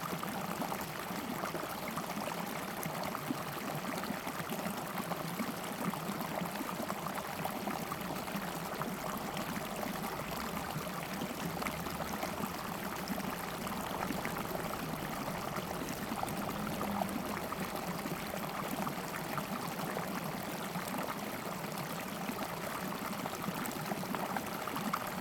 桃米巷桃米里, Taiwan - Ditch
Ditch
Zoom H2n Spatial audio